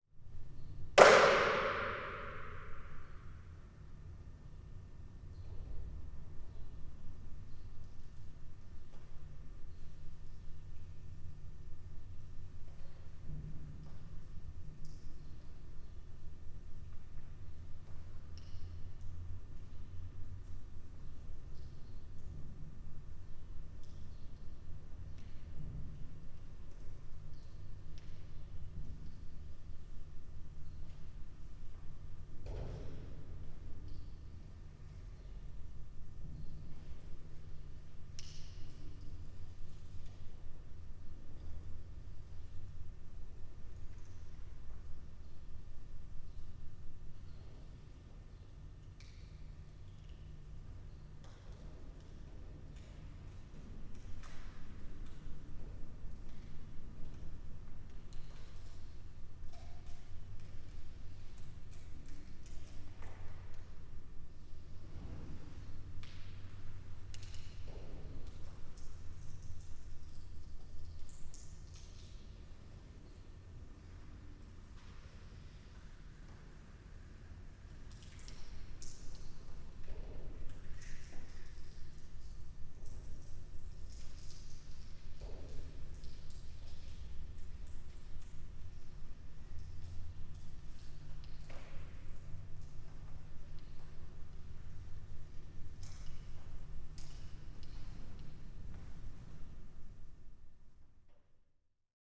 Calgary old seafood market action 03
sound action in the empty cooler room at the former seafood market of Calgary
Alberta, Canada